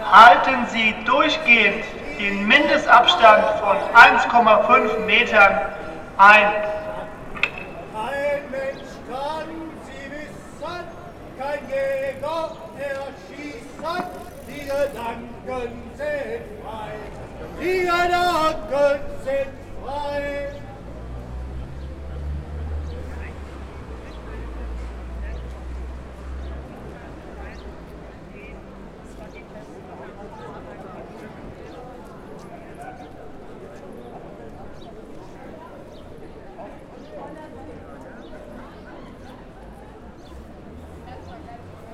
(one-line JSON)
{"title": "Demosntration, Paulskirche, Frankfurt am Main, Deutschland - First of May Meeting 2020 at Paulskirche", "date": "2020-05-01 11:12:00", "description": "The recording starts with the statement that not the corona virus is the pandemic but capitalism. On the square were something like 200 people. At 1:10 someone with a megaphone is anouncing what the discussion with the police brought. They cannot demonstrate. They are only allowed to go with fifty, she is saying that she will not count the people. After 4 minutes she says that they can just do their speeches and then go, that would be faster. People are chatting. Some crazy old fashioned socialists at 5:40 shout slogans Who saves the world. The worker and socialism. They sound like robots: Revolution, that the world is owned by the workers. At 8:50 the police is making an anouncement that the people should not be closer than one and a half meter. Someone (without mask) is singing an old german folk song (the thoughts are free). At 10:35 he shouts 'freedom for julian assange', someone comments: who is this?", "latitude": "50.11", "longitude": "8.68", "altitude": "103", "timezone": "Europe/Berlin"}